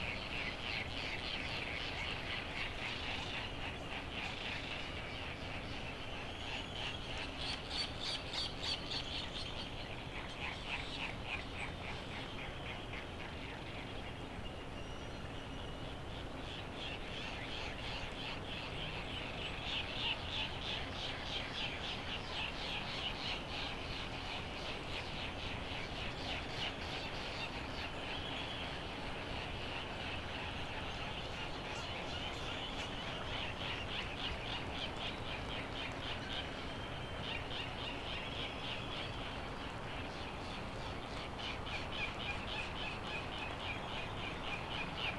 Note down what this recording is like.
Sand Island ... Midway Atoll ... laysan albatross soundscape ... open lavalier mics ... birds ... laysan albatross eh eh eh calls are usually made by birds on the nest ... though they may not be ... as the area is now covered with chicks ... bonin petrels ... white terns ... background noise ...